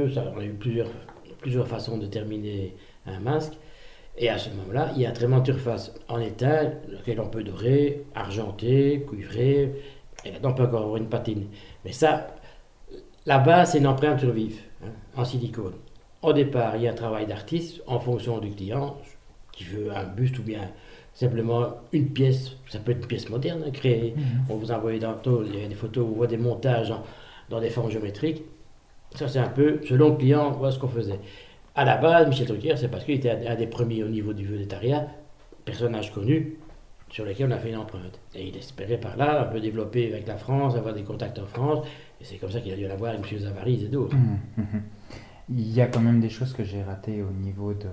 Genappe, Belgique - The moulder
Testimony of bernard Legrand, a moulder, or perhaps consider him as a sculptor, who made an excellent work in a nitriding factory.
Genappe, Belgium